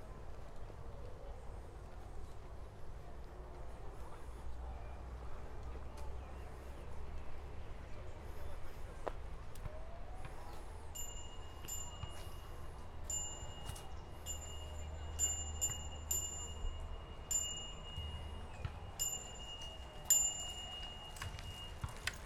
Lockdown SoundWalk @ the park, Lisboa, Portugal - Lockdown SoundWalk @ the park
Small soundwalk recording, can hear bikes, people talking and a mobile masse being transmitted outsite with Mozarts requiem as soundtrack. Recorded in bagpack situation (AB stereo config) with a pair of 172 primo capsules into a SD mixpre6.
Grande Lisboa, Área Metropolitana de Lisboa, Portugal, April 2020